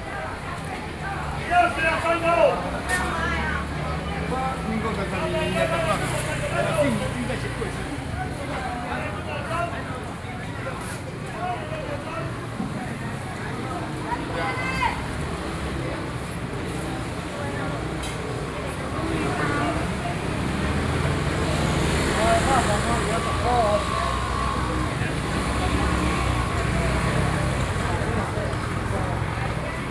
Chángshēng St, New Taipei City - SoundWalk, Traditional markets